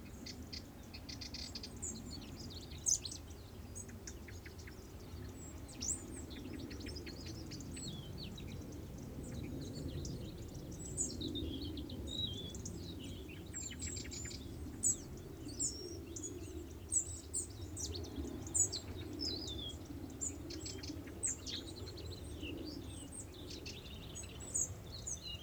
Parco Naturale Regionale Litorale di Punta Pizzo e Isola di Sant'Andrea, Italie - 30 minutes of sound pollution
Acoustic Ecology:
30 minutes of sound pollution (raw field recording)@ Parco Naturale Regionale Litorale di Punta Pizzo e Isola di Sant'Andrea, Italie
Zoom H4n (sorry !..)
+ DPA 4060